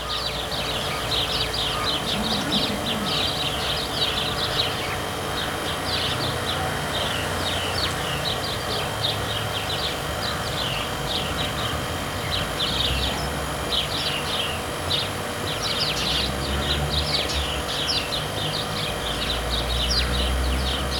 Poznan, Jana III Sobieskiego housing estate - refrigerating unit and birds
recorded at the back of a small butcher's shop. a small refrigerating unit churning buzzing and a tree bustling with sparrows to the left.
1 March, ~12:00